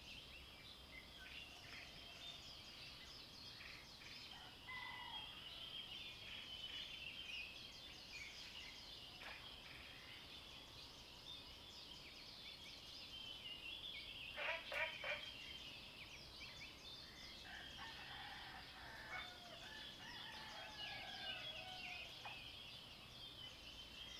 綠屋民宿, Nantou County - Early morning
Crowing sounds, Bird calls, at the Hostel, Frogs chirping
Zoom H2n XY+MS
Nantou County, Taiwan, 29 April 2015